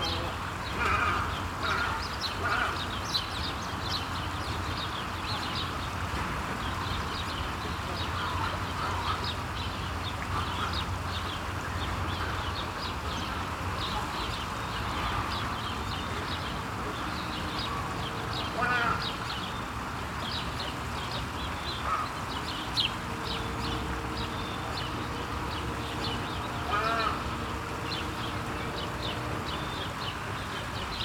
Grugapark, Virchowstr. 167 a, Essen, Deutschland - essen, gruga park, bird free fly areal
Im Gruga Park in der Vogelfreiflug Anlage. Die Klänge der Vogelstimmen und das Plätschern einer kleinen Fontäne im Vogelteich. Ein Flugzeug überquert die Anlage.
Inside the Gruga Park in an areal where birds are caged but are enabled to fly around. The sound of the bird voices and the water sounds of a small fountain inside the small bird lake. A plane is crossing the sky.
Projekt - Stadtklang//: Hörorte - topographic field recordings and social ambiences